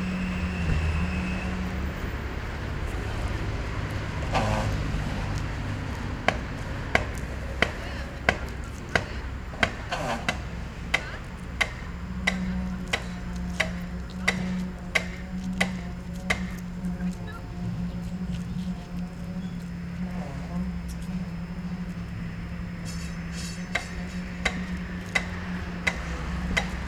Building, People, Street traffic
10 July 2011, 21:28